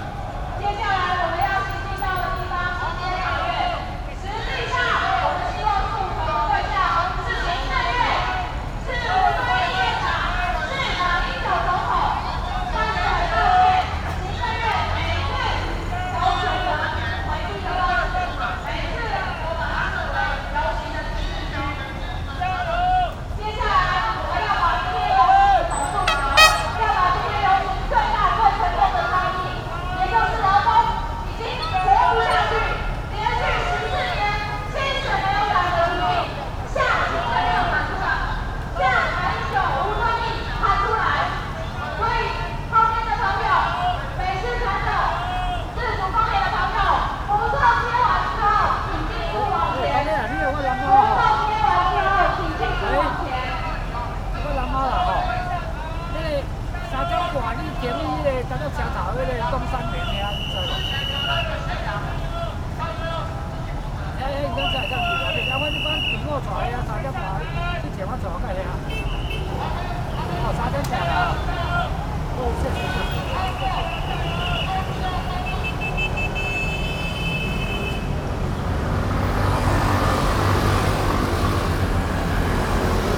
Control Yuan, Taipei - labor protests
labor protests, Sony PCM D50 + Soundman OKM II
中正區 (Zhongzheng), 台北市 (Taipei City), 中華民國, May 2012